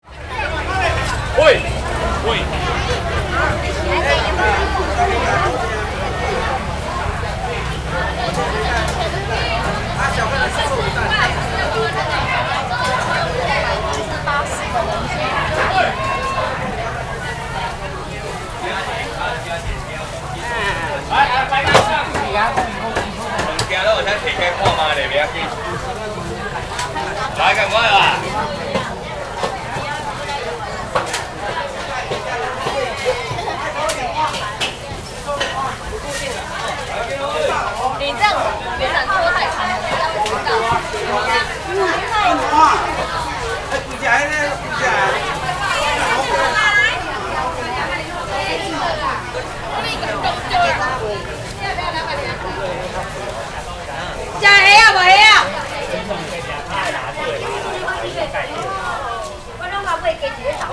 Shilin, Taipei - Traditional markets
walking in the Traditional markets, Rode NT4+Zoom H4n
板橋區 (Banqiao), 新北市 (New Taipei City), 中華民國, 19 November 2011, 10:37